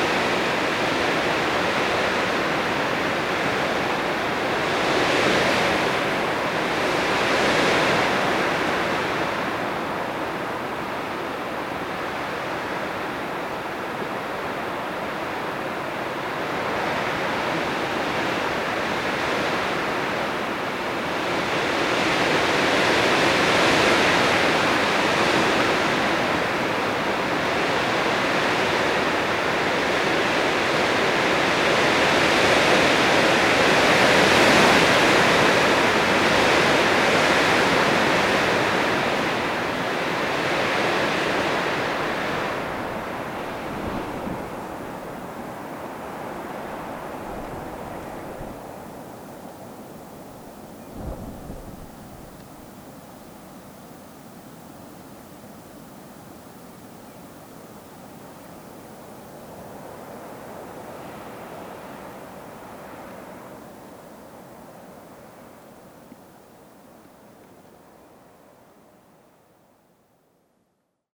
Montdardier, France - Wind in a fir
Wind is playing with the only fir of this small mountain. This makes powerful squall.
1 May 2016